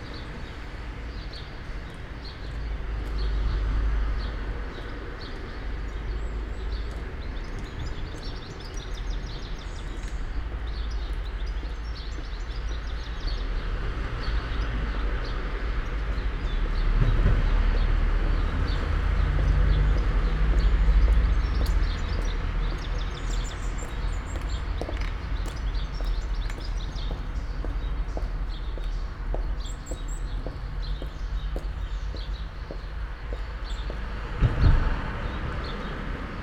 all the mornings of the ... - jun 1 2013 saturday 07:22